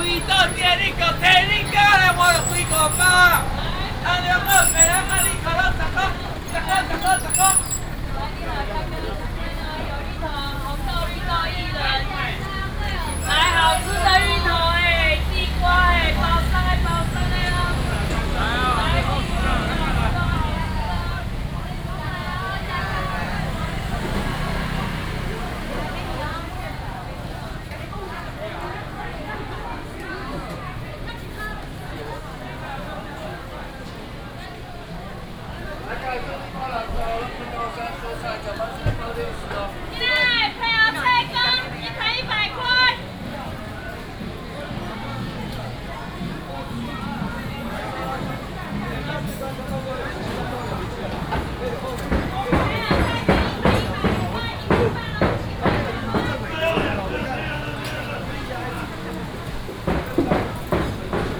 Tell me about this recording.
walking in the Traditional Markets, traffic sound, vendors peddling, Binaural recordings, Sony PCM D100+ Soundman OKM II